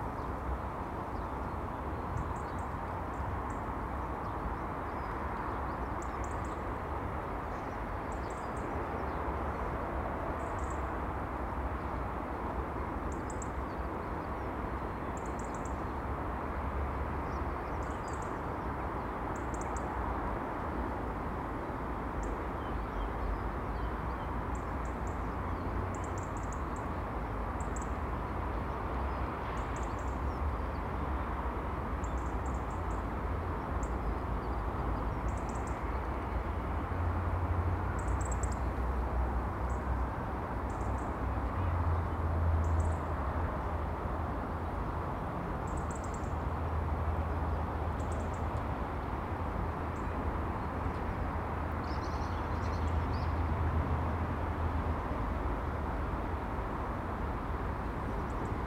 Contención Island Day 42 inner southeast - Walking to the sounds of Contención Island Day 42 Monday February 15th
The Drive High Street Moorfield
In the warm wind
the snow is melting fast
A sense of release
from the cold
a first glimpse of spring